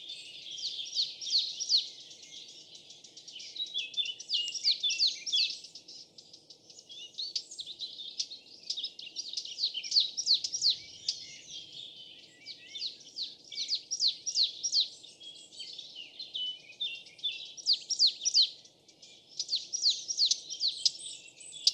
Soundscape recorded on a late winter afternoon in the woods of Monte Morello, a green area north of Florence.
The sunny and hot (considering the time of the year) day encourages different birds to sing and leave their sonic trace in this nice relaxing place.
Recorded with a Zoom H6 and Sennheiser MKE600.
3 March 2019, 14:32